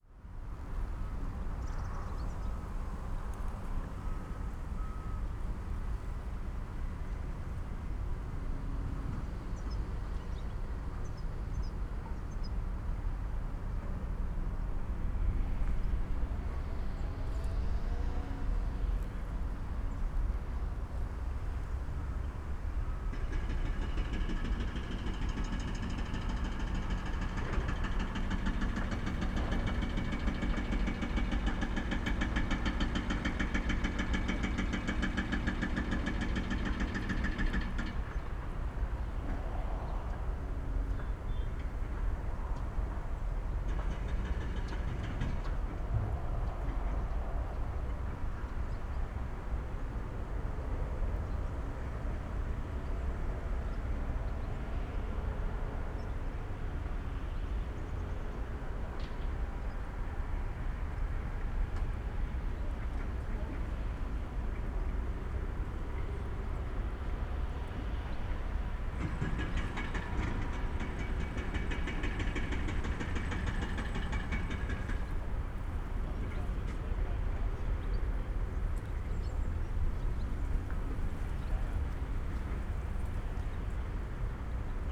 all shacks and allotment houses are gone now, machines are deconstruction former concrete structures, preparing the ground for the A100 motorway
(Sony PCM D50, DPA4060)
11 October 2013, 14:30